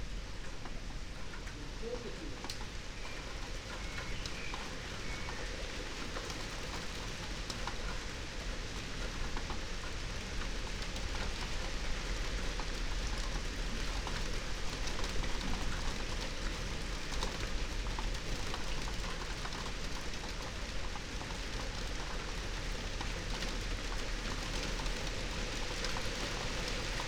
15:01 Berlin Bürknerstr., backyard window - Hinterhof / backyard ambience